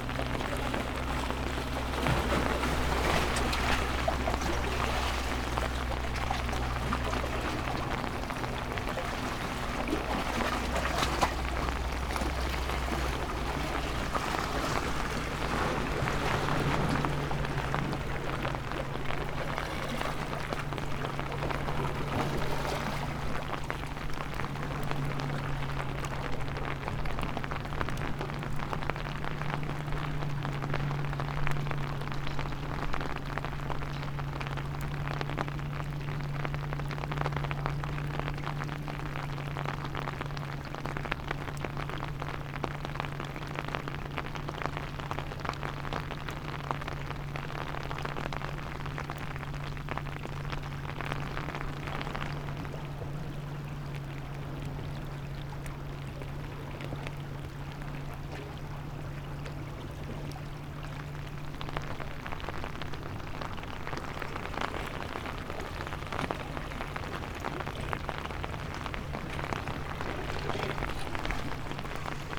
lighthouse, Novigrad - rain, seawaves, umbrella
walk around lighthouse when the raindrops poured down from marvelous, endlessly morphing clouds, seawaves, white rocks and all the spaces in-between, umbrella with two layers
Novigrad, Croatia, 13 July 2014, ~09:00